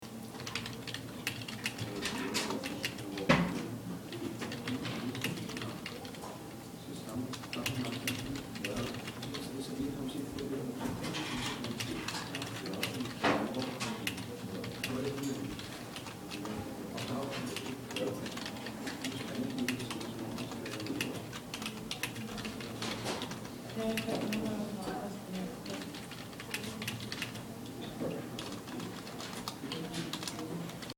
Bibliothek, NAWI Salzburg, Austria - Bibliothek
Bibliothek NAWI Studenten arbeiten an Computern